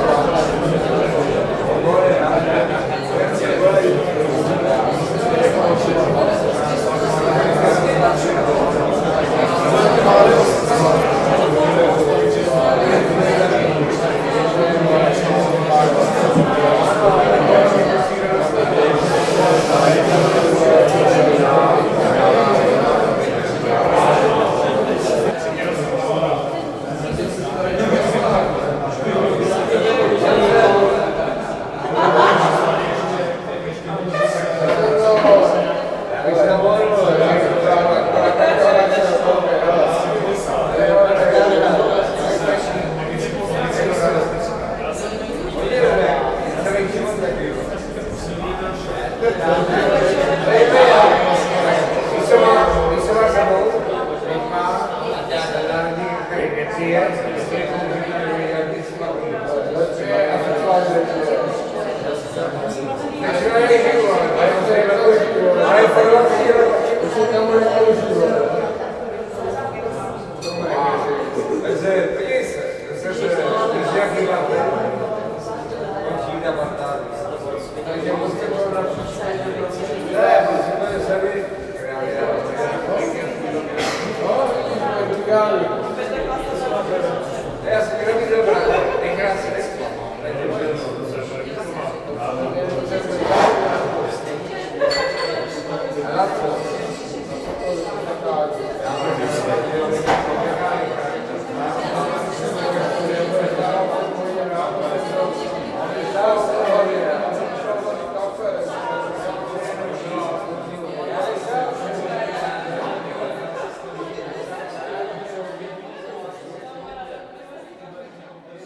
former Liben Synagoge
quite interieur of the synagoge and transfer to the nearby pub
Favourite sounds of Prague project
May 2011